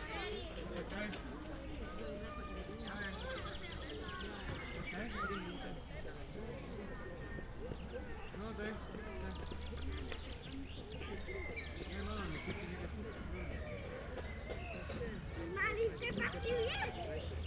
Maribor, Mestni park - playground
whitsunday ambience in Mestni park
(tech: SD702, AT BP4025)
Maribor, Slovenia